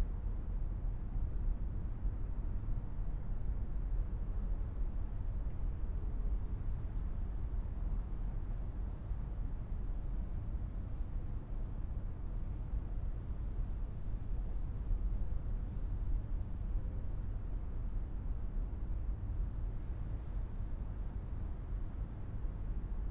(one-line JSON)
{"title": "Punto Franco Nord, Trieste, Italy - night ambience", "date": "2013-09-10 00:30:00", "description": "night ambience at former stables building\n(SD702, NT1A AB)", "latitude": "45.67", "longitude": "13.76", "altitude": "2", "timezone": "Europe/Rome"}